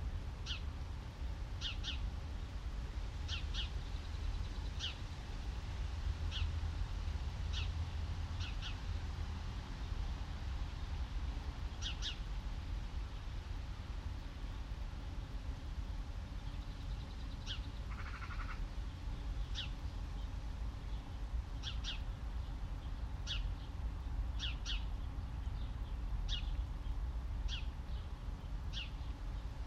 The lazy end of the afternoon, warmish and breezy. A single sparrow chirps, there a snatches of lesser whitethroat and blackcap, but most birds are quiet. A very heavy train passes.
Deutschland, 2 June 2022